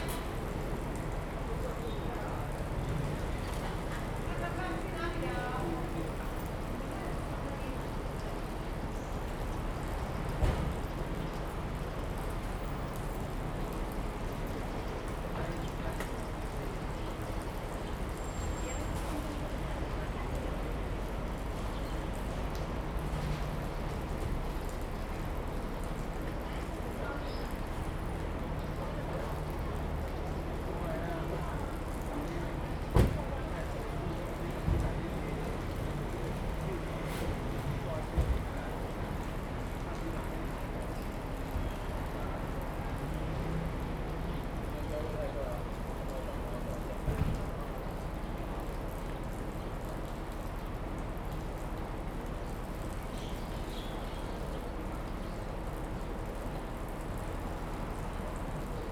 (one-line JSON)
{"title": "羅山村, Fuli Township - in the Agricultural Market Center", "date": "2014-09-07 14:52:00", "description": "in the Agricultural Market Center, Many tourists, Traffic Sound, Birds singing, Very hot weather\nZoom H2n MS+ XY", "latitude": "23.21", "longitude": "121.27", "altitude": "218", "timezone": "Asia/Taipei"}